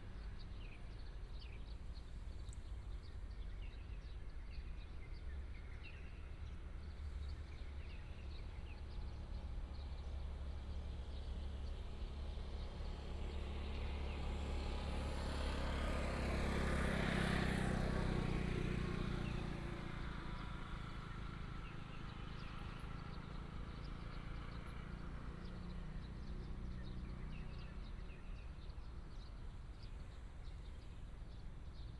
Zhanqian Rd., Zuoying Dist., Kaohsiung City - Early morning street
Early morning street, Traffic sound, birds sound
Binaural recordings, Sony PCM D100+ Soundman OKM II